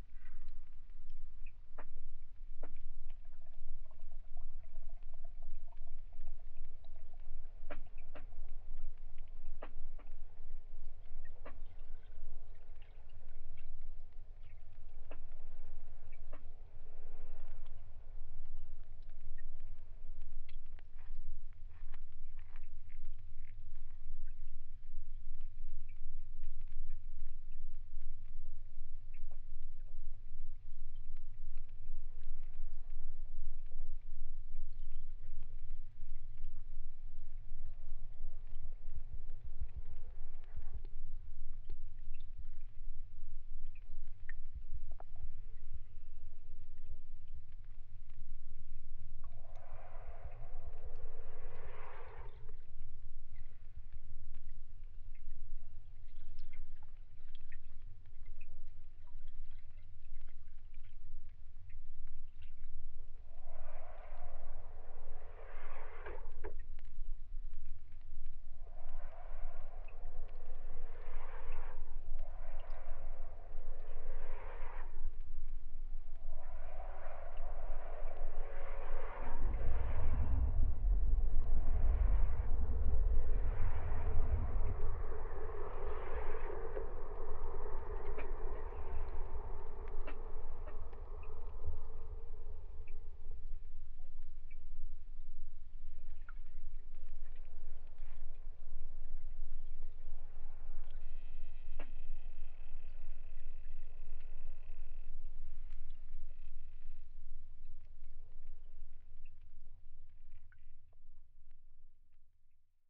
Laan van Meerdervoort, Den Haag - hydrophone rec from the bridge
Mic/Recorder: Aquarian H2A / Fostex FR-2LE
The Hague, The Netherlands, April 2009